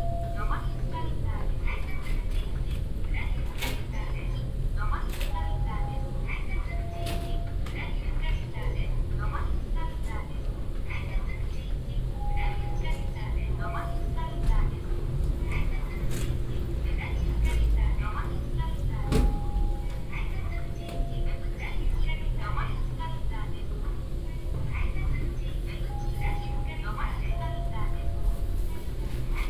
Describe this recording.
In a staircase leading down to the subway. The sound of the moving staircases and a repeated signal plus automatic voice. international city scapes - topographic field recordings and social ambiences